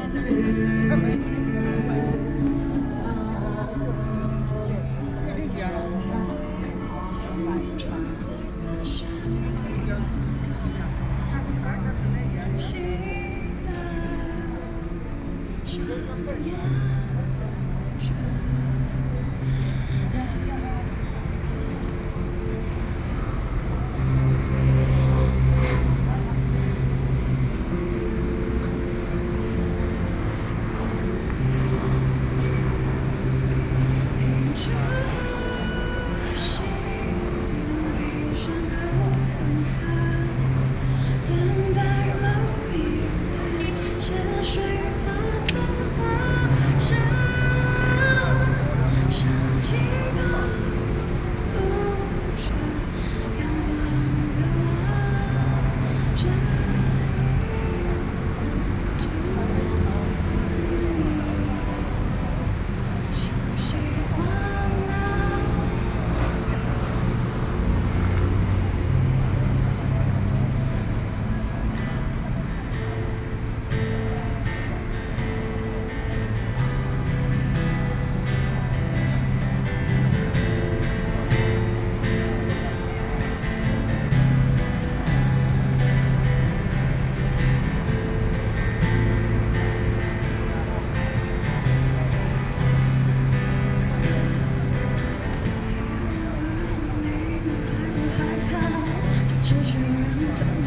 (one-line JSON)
{"title": "Taipei - anti–nuclear", "date": "2013-08-09 19:32:00", "description": "anti–nuclear power, in front of the Plaza, Broadcast sound and traffic noise, Sony PCM D50 + Soundman OKM II", "latitude": "25.04", "longitude": "121.52", "altitude": "8", "timezone": "Asia/Taipei"}